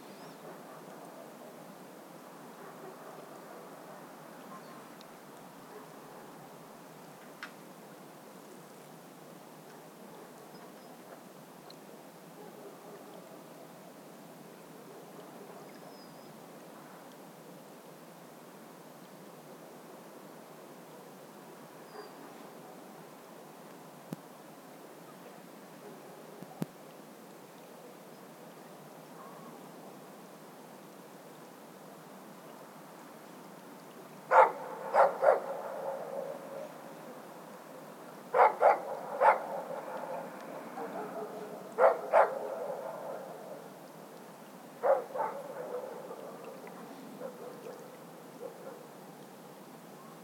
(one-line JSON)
{"title": "Lithuania, Utena, town park at winter", "date": "2011-01-24 12:23:00", "description": "some dogs in the distance, and lonely passenger...", "latitude": "55.50", "longitude": "25.60", "altitude": "102", "timezone": "Europe/Vilnius"}